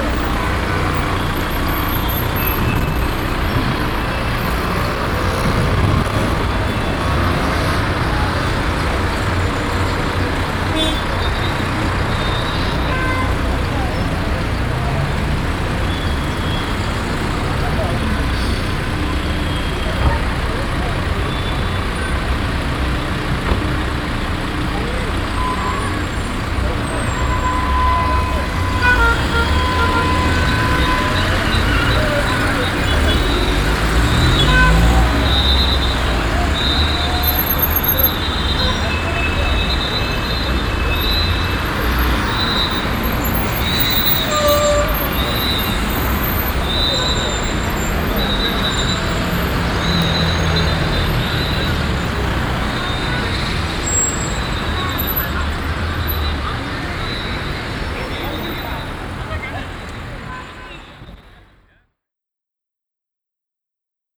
Ville Nouvelle, Tunis, Tunesien - tunis, place du 14 januar 2011
At the place du 14 januar 2011, a big traffic circle, on an early afternoon.
The sound of dense street traffic and the whistles of two policemen.
international city scapes - social ambiences and topographic field recordings